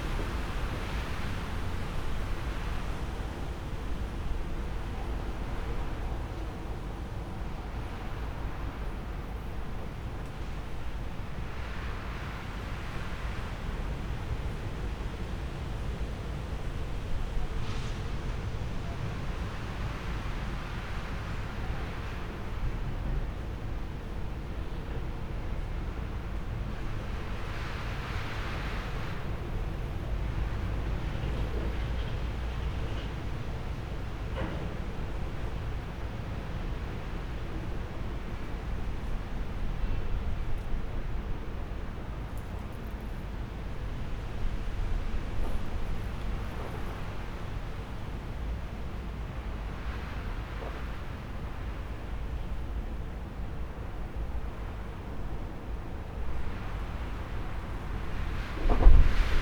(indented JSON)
{
  "title": "Izanska Cesta, Ljubljana, Slowenien - rain, wind, thunder",
  "date": "2013-05-20 22:13:00",
  "description": "recording a strong wind with rain, open windows in a small house, olympus LS-14, build in microphones, center enabled",
  "latitude": "46.04",
  "longitude": "14.51",
  "altitude": "289",
  "timezone": "Europe/Ljubljana"
}